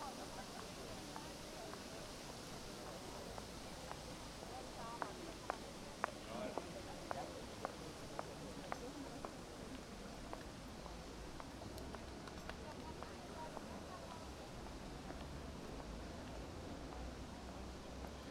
Brandenburger Tor, Berlin, Germany - Street Musician